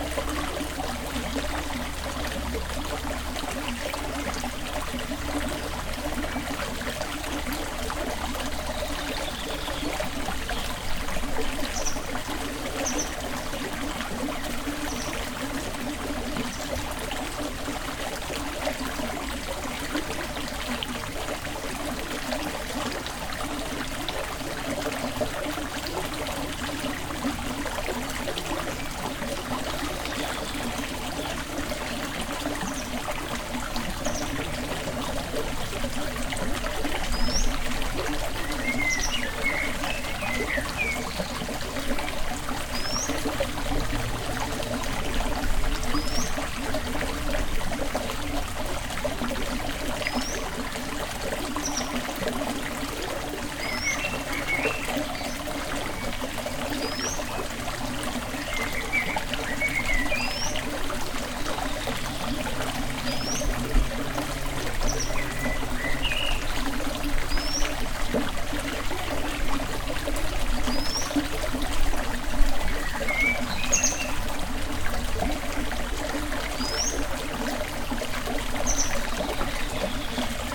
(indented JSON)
{
  "title": "Veuvey-sur-Ouche, France - Veuvey mill",
  "date": "2017-06-15 12:44:00",
  "description": "In the Veuvey mill, water is flowing quietly. It's a sunny and pleasant day near this small river.",
  "latitude": "47.19",
  "longitude": "4.71",
  "altitude": "318",
  "timezone": "Europe/Paris"
}